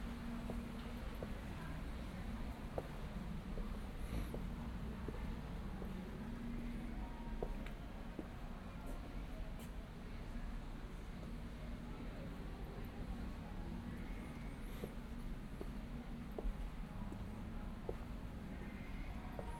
Aarau, center, night, Schweiz - nacht3
One is looking for cigarettes, the walker comes home...